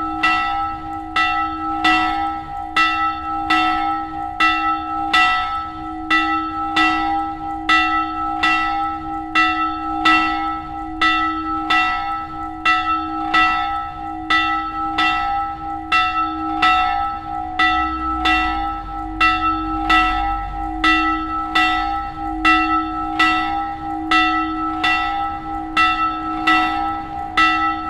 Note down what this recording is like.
The sound of the noon church bells on a sunny, mild windy late summer day. In the background the sound of traffic from the nearby main road. Tandel, Kirche, Glocken, Das Geräusch der Mittagsglocken der Kirche an einem sonnigen milden windigen Spätsommertag. Im Hintergrund das Geräusch von Verkehr von der nahen Hauptstraße. Tandel, église, cloches, Le son du carillon de midi à l’église enregistré un jour d’été ensoleillé et légèrement venteux. Dans le fond, on entend le bruit du trafic sur la grand route proche.